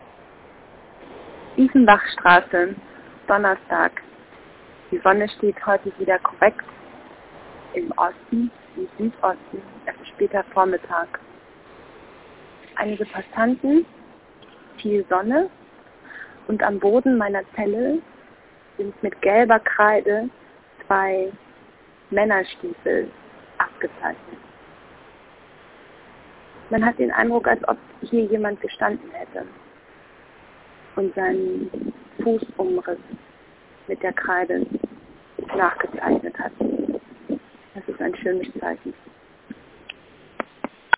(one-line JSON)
{"title": "Telefonzelle, Dieffenbachstraße - Umrisszeichnung Männerstiefel 09.08.2007 10:34:26", "latitude": "52.49", "longitude": "13.42", "altitude": "42", "timezone": "GMT+1"}